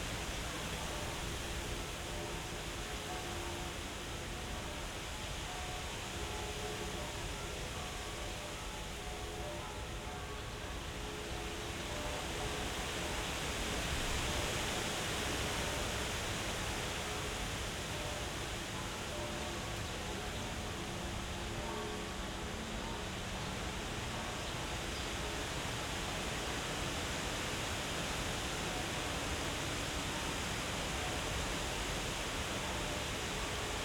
Tempelhofer Feld, Berlin, Deutschland - wind in poplar trees, church bells
place revisited on a hot summer day
(SD702, AT BP4025)
Berlin, Germany